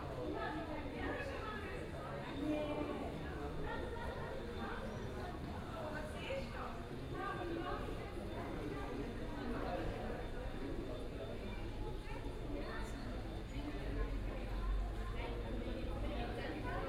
Aarau, Kirchplatz, Abendstimmung, Schweiz - Kirchplatz abends
Still the same evening stroll, place in front of the church, the church bells toll a quarter to nine. In front of the 'Garage' people are chatting.